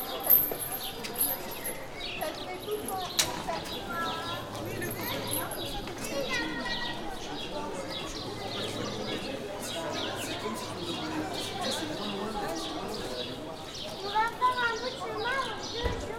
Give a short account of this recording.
enregisté lor du tournage pigalle la nuit